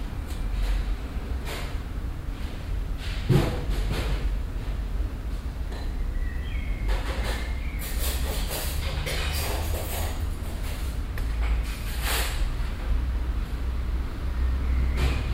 cologne, mainzerstr, altenpflegeheim, küche
soundmap: köln/ nrw
küchenarbeit im altenpflegeheim mainzerstr, mittags - geschirr und teller geräusche dazu strassenverkehr
project: social ambiences/ listen to the people - in & outdoor nearfield recordings